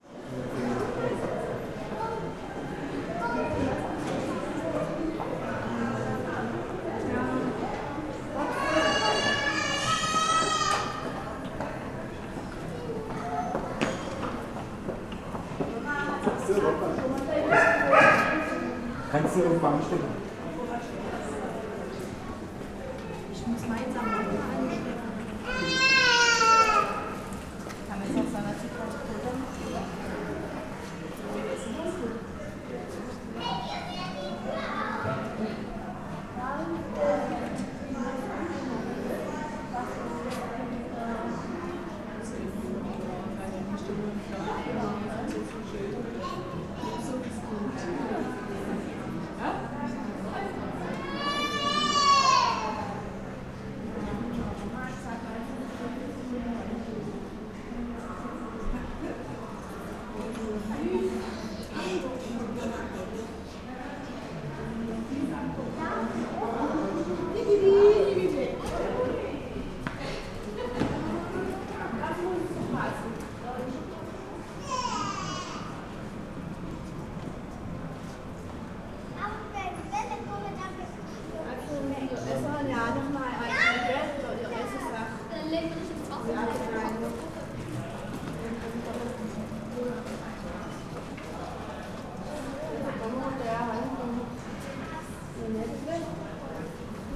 mainz, templerstraße: hotel - the city, the country & me: hotel foyer
the city, the country & me: may 7, 2016